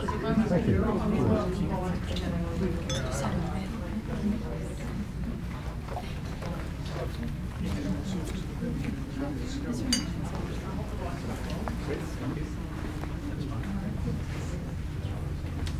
Art Gallery on the Atlantic Ocean - Voices
Voices heard during an art event in the gallery of a liner during an Atlantic crossing.. MixPre 3, 2 x Beyer Lavaliers.
1 August